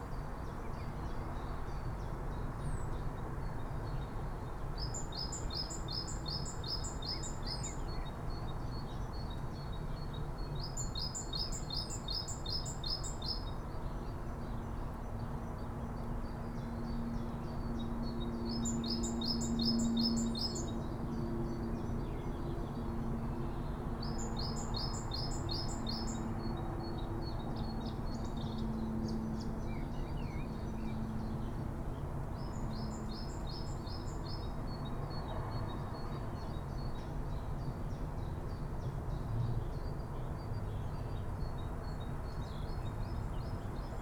Schöneberger Südgelände, Berlin - pedestrian bridge, entrance to park, ambience
on the iron pedestrian bridge, entrance to art & nature park Schöneberger Südgelände, which is closed during the night and opens 9:00am. Area ambience with trains, heard on top of the bridge.
(Sony PCM D50, DPA4060)